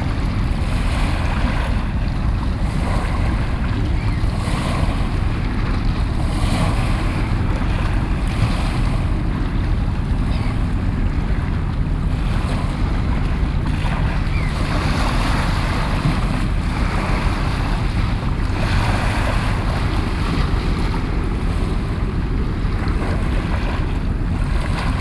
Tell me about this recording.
Norway, Oslo, Bygdoy, Sea, Waves, binaural